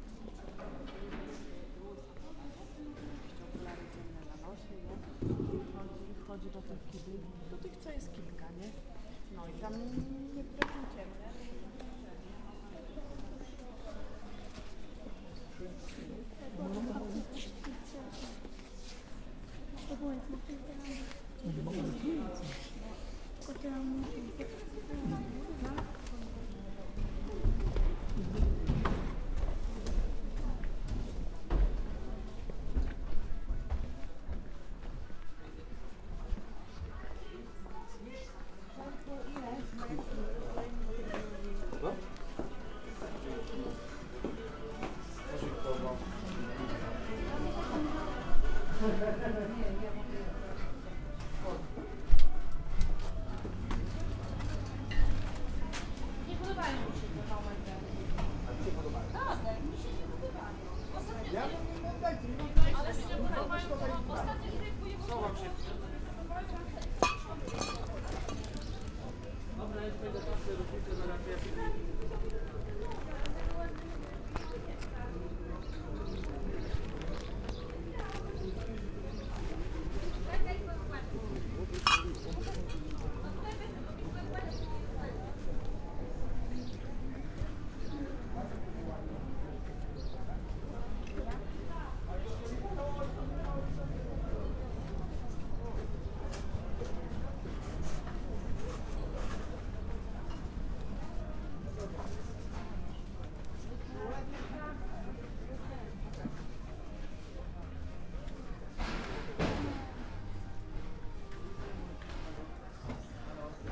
cedynia, osinów dolny: market hall - the city, the country & me: soundwalk through market hall
binaural soundwalk through market hall with numerous shops, cafes etc.
the city, the country & me: may 10, 2014